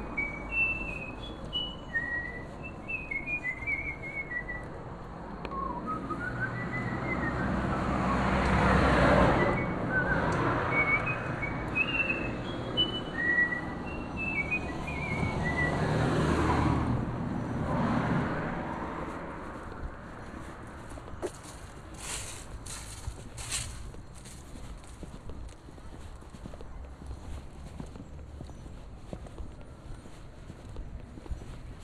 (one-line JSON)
{"title": "Givors, Rue casanova - Casanova Song", "date": "2015-11-24 12:45:00", "description": "Durant un mois environ, un jour ou deux par semaine, nous nous installons, un seul artiste, parfois eux, trois ou quatre, sur une place de Givors, face à un lycée. Place dent creuse, délaissé urbain en attente de requalification, entourée d'immeubles, avec des vestiges carrelés d'un ancien immeuble, qui nous sert de \"salon\" en plein air. Canapés et fauteuils, étrange pèche, lecture et écritures au sol, tissages de fil de laine, écoute, dialogues. Les lycéens, des adultes, même des policiers; viennent nous voir, tout d'abord intrigués de cette étrange occupations, parlent de leur quartier, font salon... Tout ce que l'on recherche dans cette occupation poétique de l'espace public. Nous écrivons, photographions, enregistrons... Matière urbaine à (re)composer, traces tranches de ville sensibles, lecture et écritures croisées de territoires en constante mutation... Et sans doute un brin de poésie, visiblement apprécié, dans ce monde violent, incertain et inquiet.", "latitude": "45.59", "longitude": "4.77", "altitude": "162", "timezone": "Europe/Paris"}